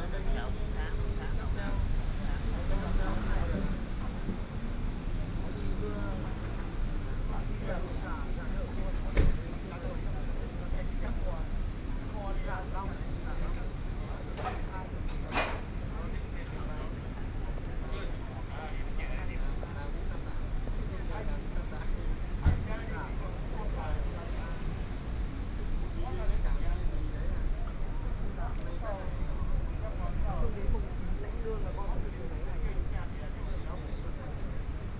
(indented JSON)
{
  "title": "中山區復華里, Taipei City - in the Park",
  "date": "2014-05-02 12:21:00",
  "description": "Traffic Sound, Noon break, in the Park, Workers break",
  "latitude": "25.05",
  "longitude": "121.54",
  "altitude": "24",
  "timezone": "Asia/Taipei"
}